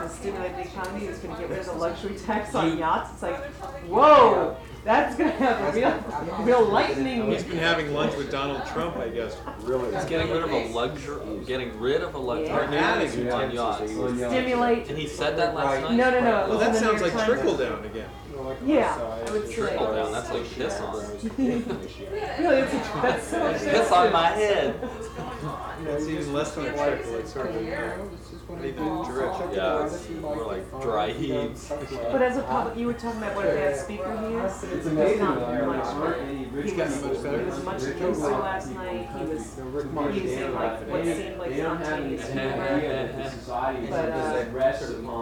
neoscenes: dinner with B&A